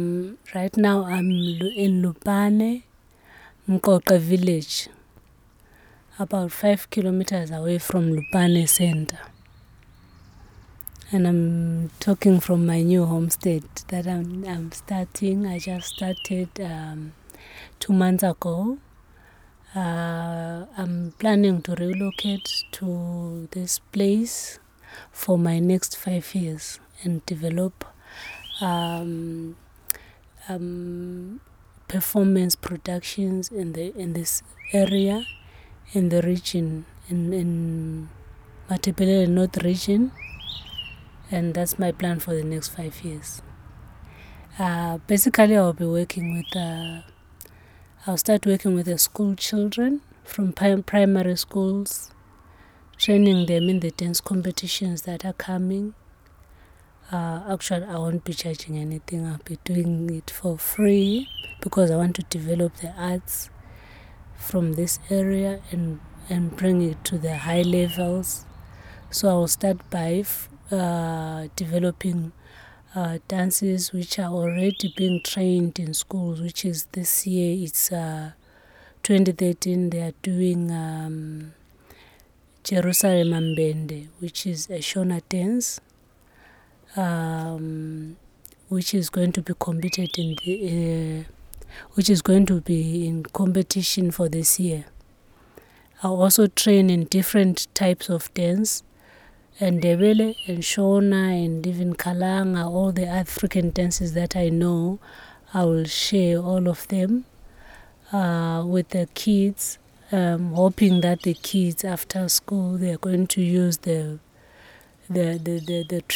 Lupane, Zimbabwe - “I like to develop something from nothing…”
We are sitting with Thembi in the shade of her new home, while a merciless midday-sun is burning over the quiet Lupane bush-land… our brief recording is a follow up on a longer interview, we recorded almost exactly a year ago, 29 Oct 2012, when Thembi was still based at Amakhosi Cultural Centre. “I like to develop something from nothing…” she tells us looking at her present homestead and the work she imagines to do here. She wants to continue sharing her skills and knowledge of the African dances with the women and children in this rural district of Zimbabwe, beginning with the pupils in local Primary Schools. Her aim is to set up a cultural centre here in the bush of Lupane…
October 2013